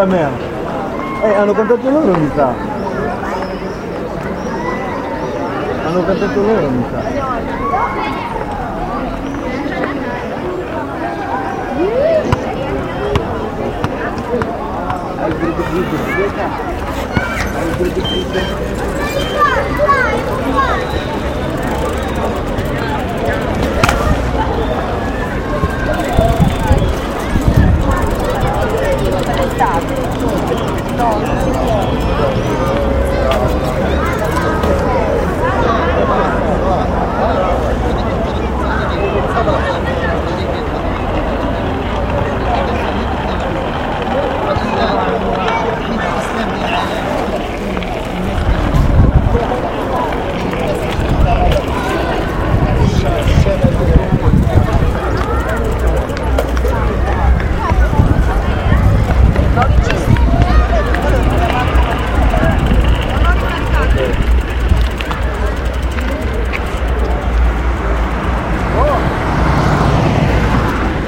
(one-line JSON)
{"title": "Piazza Maggiolini, Parabiago, gente che parla", "date": "2010-06-24 21:37:00", "description": "Gente che parla in piazza al termine di una calda giornata estiva", "latitude": "45.56", "longitude": "8.95", "altitude": "183", "timezone": "Europe/Rome"}